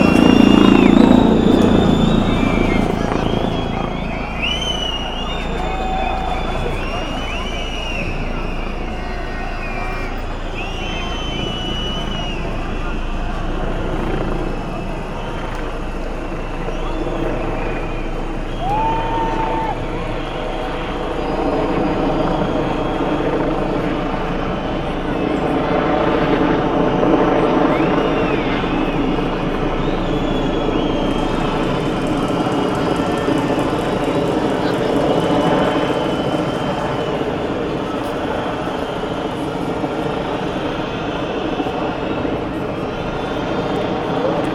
demonstrations against corrupted authorities ... police chopper arrived at the end of recording, it is just before tear gas shower, police on horses and on the ground executed violence against people